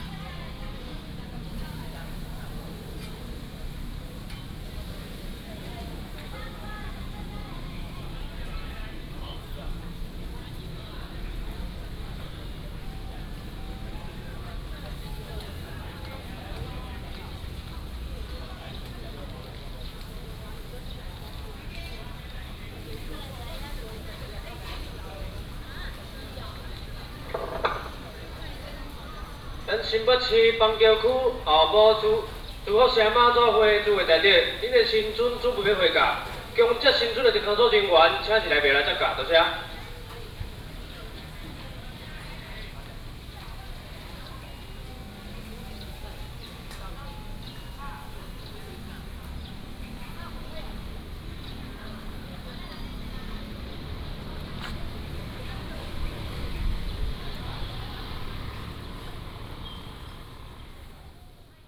鹿港天后宮, Lukang Township - In front of the temple square
In front of the temple square, tourist